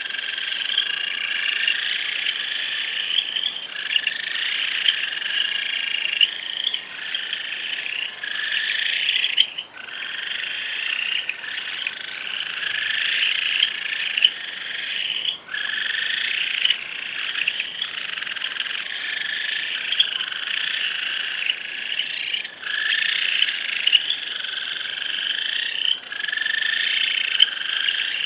Iriomote Jima - Iriomote Jima (daytime and night-time recordings)
Bird and amphibian life on Iriomote
recorded onto a Sony Minidisc recorder